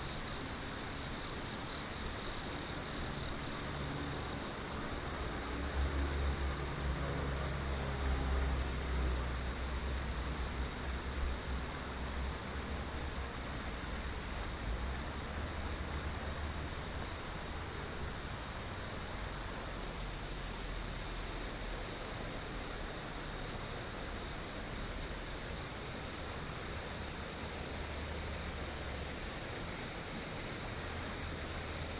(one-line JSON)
{"title": "Shilin District, Taipei - Cicadas", "date": "2012-06-23 06:18:00", "description": "Cicadas, The sound of water, Sony PCM D50", "latitude": "25.11", "longitude": "121.56", "altitude": "70", "timezone": "Asia/Taipei"}